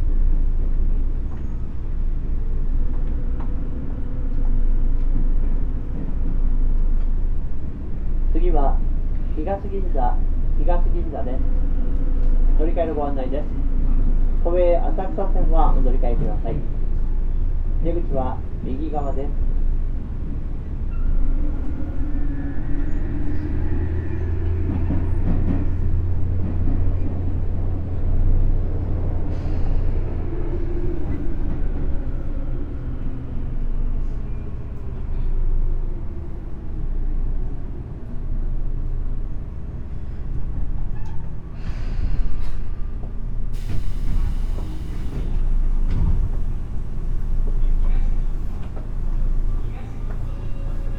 from Kamiyacho to Ueno station